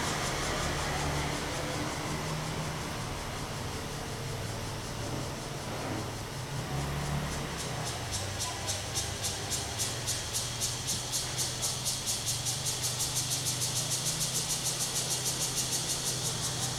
大仁街, Tamsui District - Cicadas and traffic sound
Cicadas sound and traffic sound
Zoom H2n MS+XY